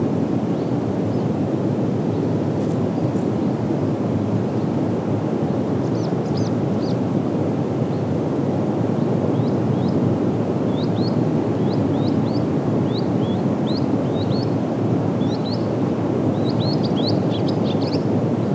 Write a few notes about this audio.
Recordist: Aleksandar Baldazarski, Description: Between the sand dune and the forest. Wind sounds and birds chirping. Recorded with ZOOM H2N Handy Recorder.